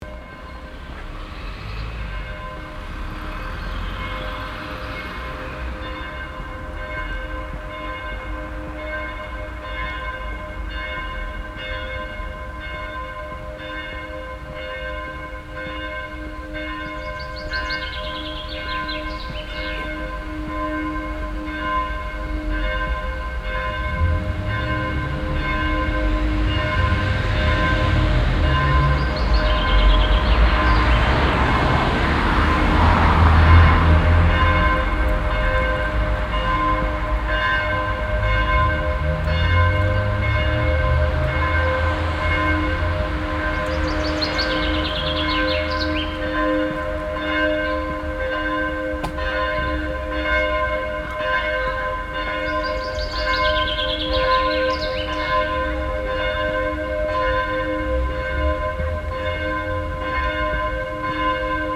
Südostviertel, Essen, Deutschland - essen, michael church, bells
An der Michael Kirche. Der Klang der 12 Uhr Glocken. Echos von den Häuserwänden und Strassenverkehr.
At the Michael church. The sound of the 12 o clock bells. Echoes from the walls of the other houses and street traffic.
Projekt - Stadtklang//: Hörorte - topographic field recordings and social ambiences
Essen, Germany, 26 April, 12:00